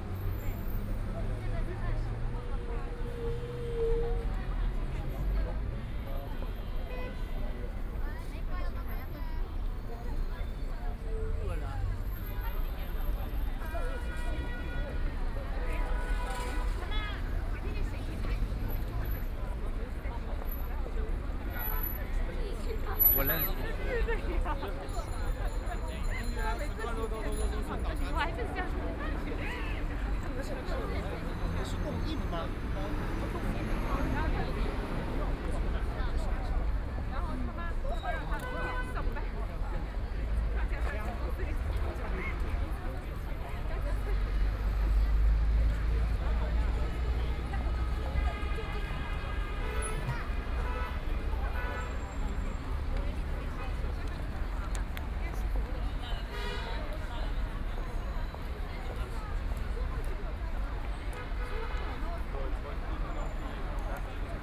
{
  "title": "Tibet Road, Huangpu District - Walking on the road",
  "date": "2013-11-23 17:42:00",
  "description": "Walking in the street, Traffic Sound, Street, with moving pedestrians, Binaural recording, Zoom H6+ Soundman OKM II",
  "latitude": "31.23",
  "longitude": "121.47",
  "altitude": "9",
  "timezone": "Asia/Shanghai"
}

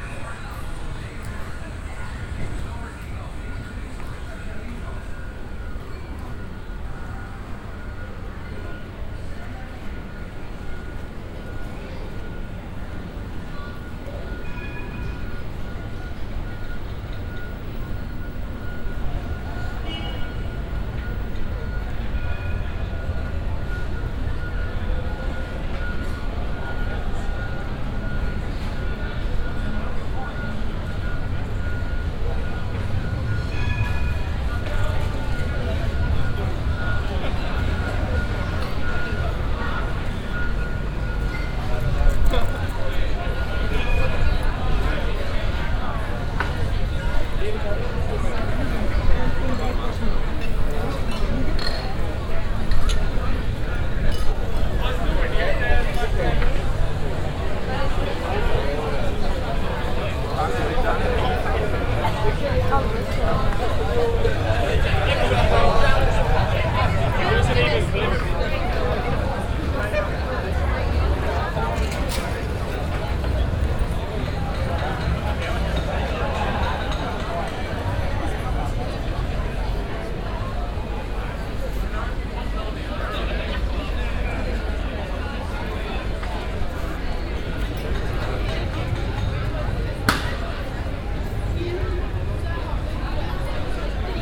{
  "title": "amsterdam, leidseplein, tram station",
  "date": "2010-07-11 12:42:00",
  "description": "a place in the center, open air bars, tourists, the tram station - trams passing by\ncity scapes international - social ambiences and topographic field recordings",
  "latitude": "52.36",
  "longitude": "4.88",
  "altitude": "-1",
  "timezone": "Europe/Amsterdam"
}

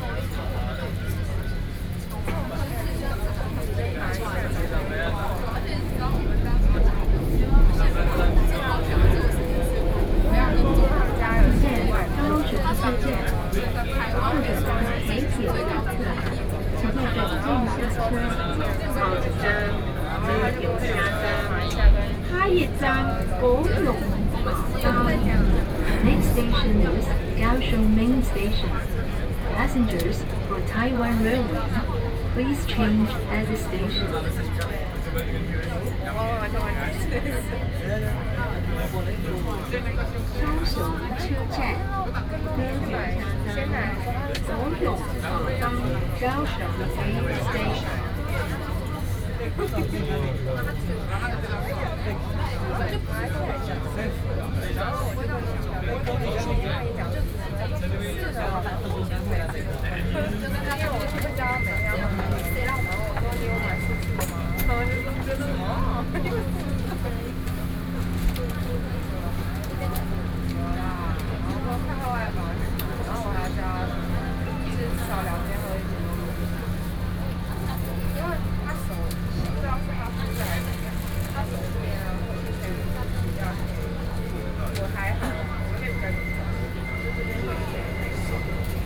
{
  "title": "Sanmin, Kaohsiung - inside the Trains",
  "date": "2013-04-20 19:19:00",
  "description": "inside the MRT train, Sony PCM D50 + Soundman OKM II",
  "latitude": "22.65",
  "longitude": "120.30",
  "altitude": "18",
  "timezone": "Asia/Taipei"
}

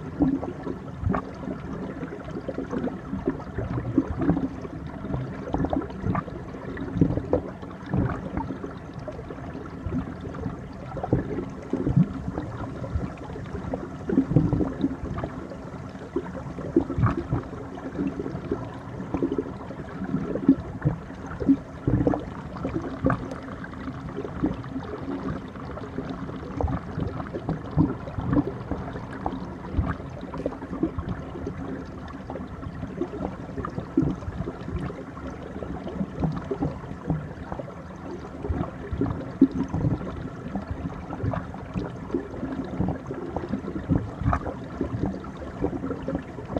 {
  "title": "Lithuania, Utena, churning waters under the ice",
  "date": "2011-02-27 16:24:00",
  "description": "just placed mic on the frozen river",
  "latitude": "55.46",
  "longitude": "25.58",
  "altitude": "129",
  "timezone": "Europe/Vilnius"
}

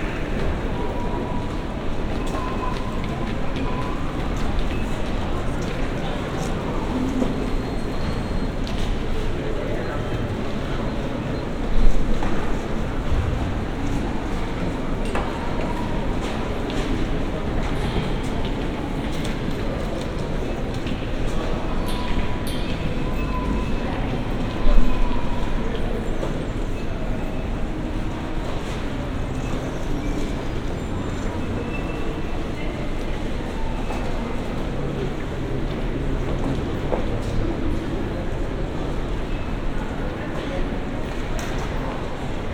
{
  "title": "Berlin, Friedrichstr., bookstore - 2nd floor ambience",
  "date": "2012-12-21 14:50:00",
  "description": "annual bookstore recording, hum, murmur and scanners heard from a platform on the 2nd floor.\n(Olympus LS5, Primo EM172)",
  "latitude": "52.52",
  "longitude": "13.39",
  "altitude": "49",
  "timezone": "Europe/Berlin"
}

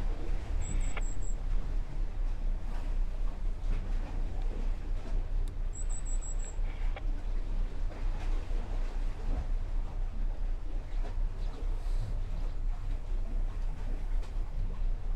{
  "title": "Klaipeda, Lithuania, the pier and radio scanner",
  "date": "2018-10-21 11:10:00",
  "description": "listening to marine radio conversations on the pier stones",
  "latitude": "55.73",
  "longitude": "21.08",
  "timezone": "Europe/Vilnius"
}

